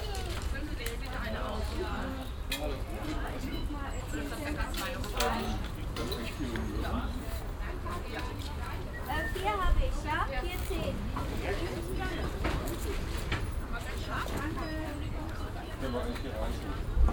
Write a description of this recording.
früher morgen, betrieb auf dem wöchentlichen biomarkt, stimmen, fahrradständer, tütenknistern aus- und einladen von waren, soundmap nrw - social ambiences - sound in public spaces - in & outdoor nearfield recordings